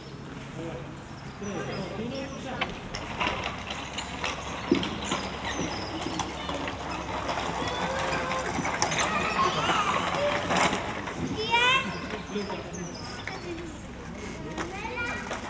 Parc Faider, Ixelles, Belgique - Children playing
Construction site nearby, a few birds.
Tech Note : Ambeo Smart Headset binaural → iPhone, listen with headphones.